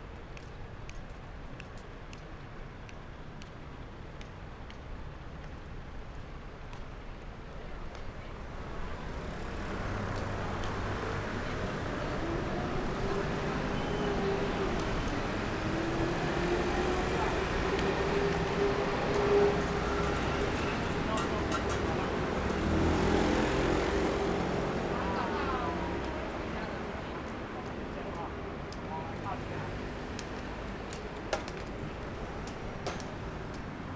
{"title": "Peace Memorial Park, Taiwan - In the corner of the street", "date": "2014-01-21 16:13:00", "description": "In the corner of the street, Followed a blind, The visually impaired person is practicing walking on city streets, Zoom H6 Ms + SENNHEISER ME67", "latitude": "25.04", "longitude": "121.52", "timezone": "Asia/Taipei"}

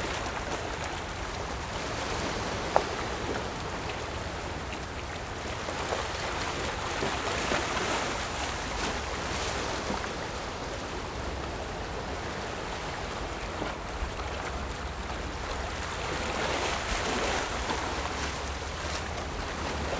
{"title": "Yu’ao, Wanli Dist., New Taipei City - The sound of the waves", "date": "2012-06-25 16:57:00", "latitude": "25.19", "longitude": "121.69", "altitude": "10", "timezone": "Asia/Taipei"}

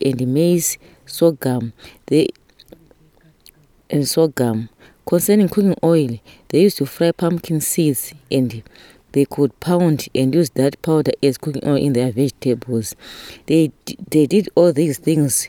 {"title": "Sikalenge, Binga, Zimbabwe - Women at the Zambezi river...", "date": "2016-10-31 18:15:00", "description": "Lucia Munenge gives a summary translation of the interview with Ester in English", "latitude": "-17.67", "longitude": "27.46", "altitude": "574", "timezone": "Africa/Harare"}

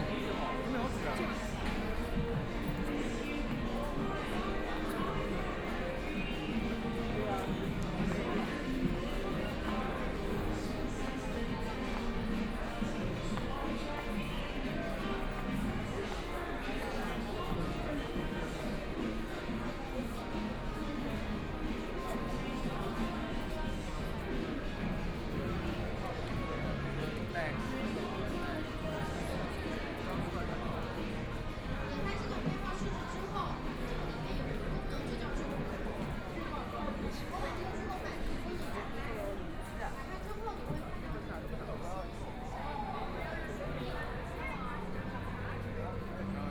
{"title": "Nanjin Road, Shanghai - inside the department store", "date": "2013-11-25 16:18:00", "description": "The crowd, Walking inside the department store, Footsteps, Traffic Sound, Binaural recording, Zoom H6+ Soundman OKM II", "latitude": "31.24", "longitude": "121.48", "altitude": "9", "timezone": "Asia/Shanghai"}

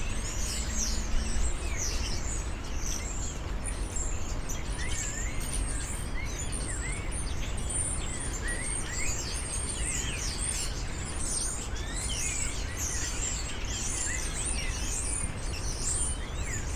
{"title": "Thamesmead, UK - Birds of Southmere Park Way", "date": "2017-02-11 14:50:00", "description": "Recorded with a stereo pair of DPA 4060s and a Marantz PMD661.", "latitude": "51.49", "longitude": "0.13", "altitude": "4", "timezone": "GMT+1"}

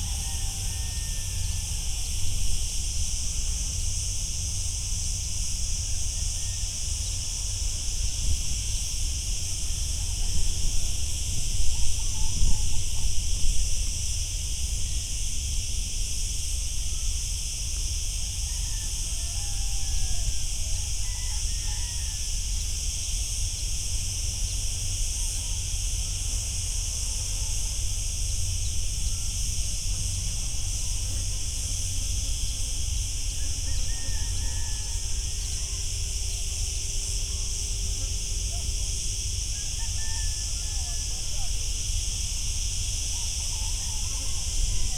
{"title": "Campsite 3 - Ahrax tar-Ramel, Triq Dahlet Ix-Xmajjar, Mellieha, Malta - crickets", "date": "2020-09-24 16:31:00", "description": "passing by one of the most forested areas I saw on Malta. Lots of crickets occupying trees and bushes. (roland r-07)", "latitude": "35.99", "longitude": "14.37", "altitude": "43", "timezone": "Europe/Malta"}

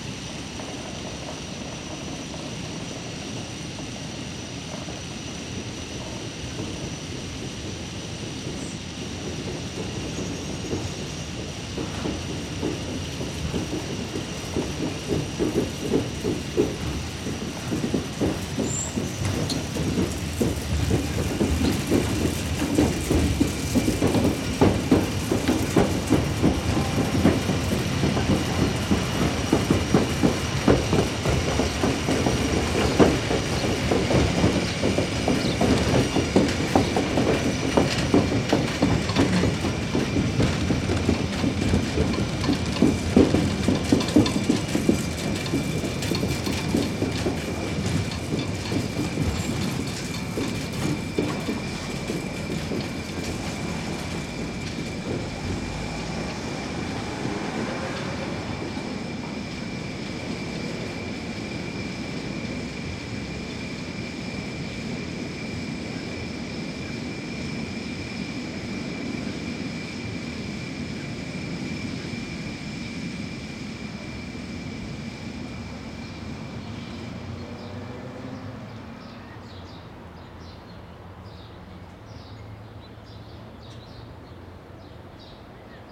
{"title": "Polybahn, Zürich, Schweiz - Standseilbahn", "date": "1987-05-16 15:17:00", "description": "Am Aufnahmeort kreuzen sich die Seilbahnen. Die Fahrt ist so lang, wie wir das Rollen des Zugseils hören.\n1987", "latitude": "47.38", "longitude": "8.55", "altitude": "435", "timezone": "Europe/Zurich"}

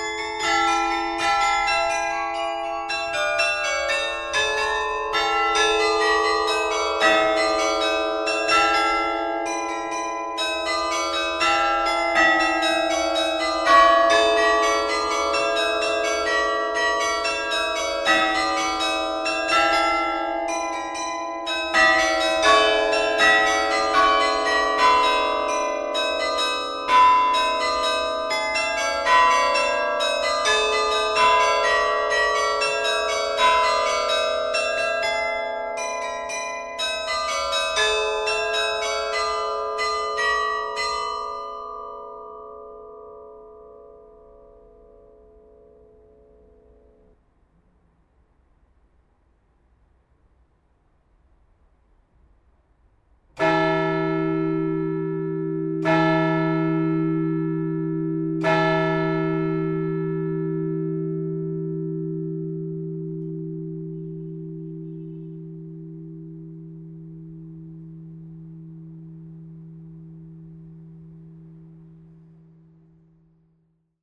{"title": "Huy, Belgique - Huy jingle", "date": "2010-01-24 14:58:00", "description": "The Huy jingle played automatically on bells every hour.", "latitude": "50.52", "longitude": "5.24", "timezone": "Europe/Brussels"}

